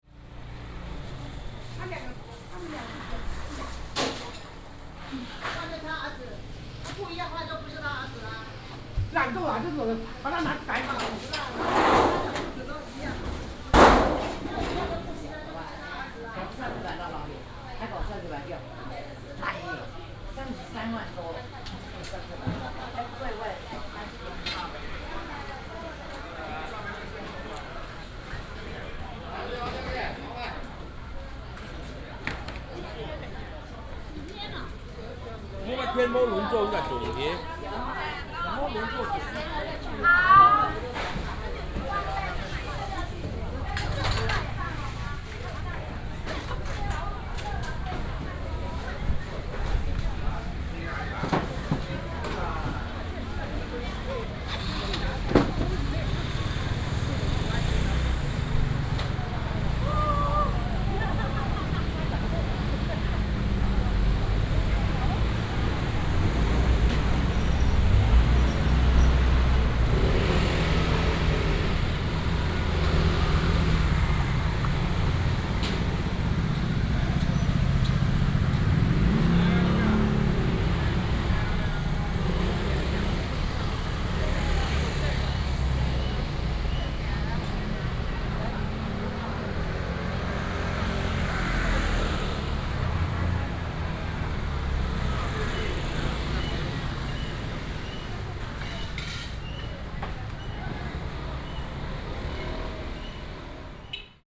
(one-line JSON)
{"title": "Market St., Yangmei Dist., Taoyuan City - Through the market", "date": "2017-01-18 12:28:00", "description": "walking in the Street, Through the market, Traffic sound", "latitude": "24.91", "longitude": "121.14", "altitude": "175", "timezone": "Asia/Taipei"}